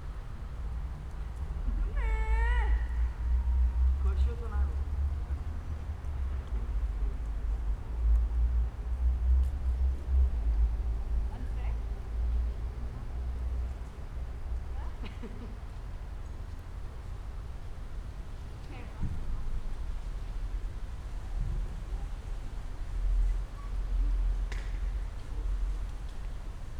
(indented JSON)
{
  "title": "Stallschreiberstraße, Berlin Kreuzberg - building block, inner yard ambience",
  "date": "2020-11-08 15:20:00",
  "description": "Stallschreiberstraße, Berlin Kreuzberg, yard between houses, some youngsters hanging around at the playground, cold autumn Sunday afternoon, 2nd pandemic lockdown in town\n(Sony PCM D50, DPA4060)",
  "latitude": "52.51",
  "longitude": "13.41",
  "altitude": "40",
  "timezone": "Europe/Berlin"
}